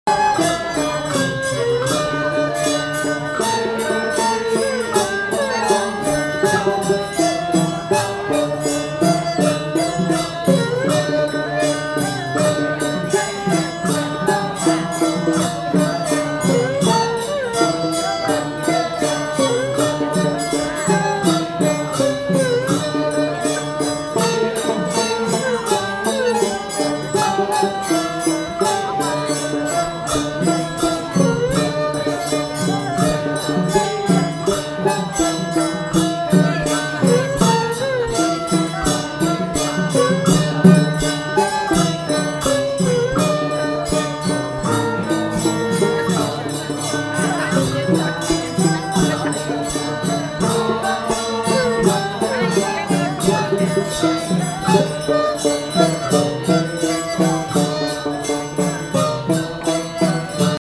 The Lord of Heaven Temple 台南天公壇 - Ceremony song for lord of heaven 天公陞壇祝壽
Ceremony song for lord of heaven in the temple.
West Central District, Tainan City, Taiwan, 9 February 2014